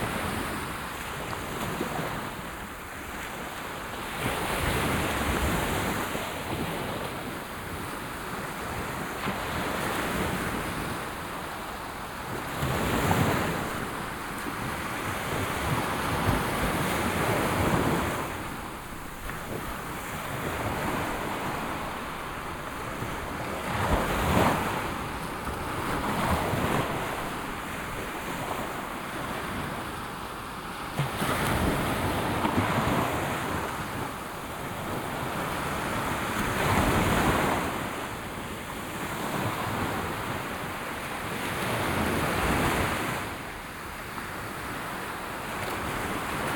San Juan Playa, Alicante, Spain - (05) Waves at San Juan beach in Alicante
Binaural recording of waves at San Juan beach in Alicante.
recorded with Soundman OKM + Sony D100
posted by Katarzyna Trzeciak